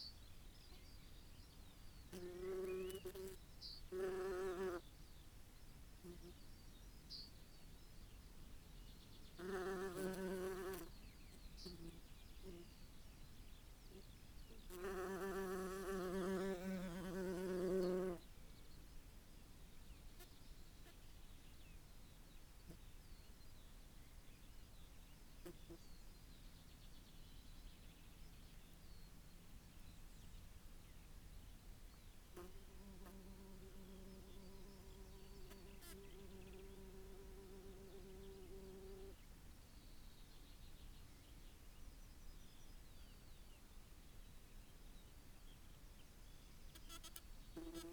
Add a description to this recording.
grubbed out bees nest ... buff tipped bees nest ..? dug up by a badger ..? dpa 4060s in parabolic to MixPre3 ... parabolic resting on lip of nest ... bird song ... calls ... yellowhammer ... blackbird ... whitethroat ...